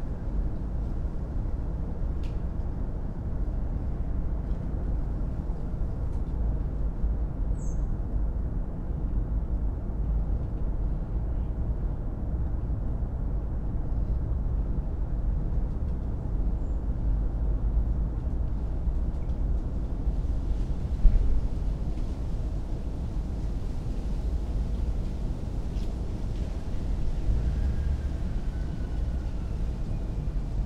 Berlin Bürknerstr., backyard window - unidentified hum and noise
a strange unidentified noise and deep hum heard on an early monday morning. it may be caused by works at the nearby Landwehrkanal, where sort of renovation is going on.
(Sony PCM D50, DIY Primo EM172)